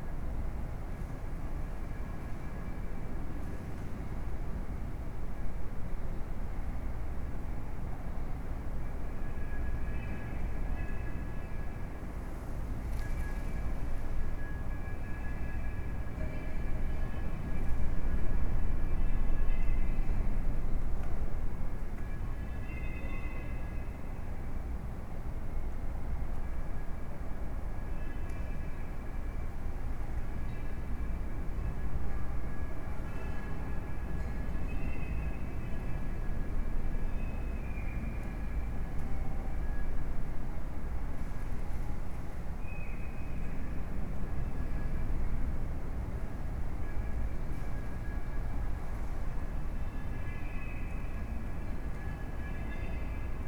Sagres, Fortalesa
inside Fortalesas church-out strong wind